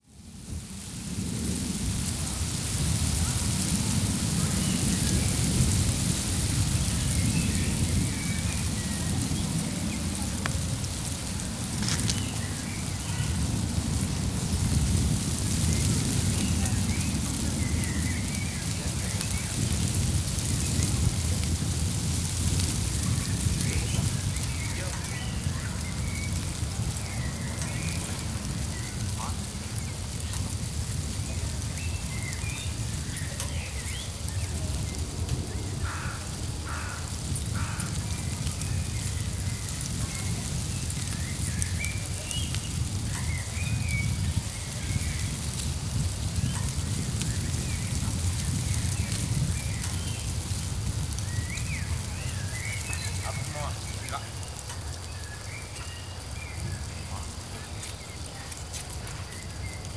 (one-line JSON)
{"title": "Park Sanssouci, Potsdam - fence with plastic flaps", "date": "2014-06-01 17:58:00", "description": "A fence with hundreds of plastic scraps attached to it. the flaps making a gentle crunching, sizzling sound in the wind.", "latitude": "52.40", "longitude": "13.03", "altitude": "31", "timezone": "Europe/Berlin"}